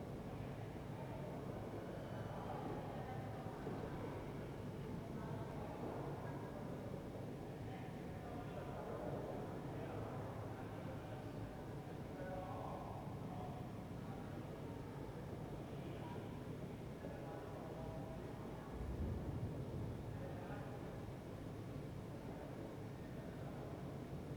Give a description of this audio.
"New Year night in the time of COVID19": soundscape. Chapter CL of Ascolto il tuo cuore, città. I listen to your heart, city, Monday December 28th 2020. Fixed position on an internal terrace at San Salvario district Turin, about seven weeks of new restrictive disposition due to the epidemic of COVID19. Start at 11:46 a.m. end at 00:46 p.m. duration of recording 01:00:00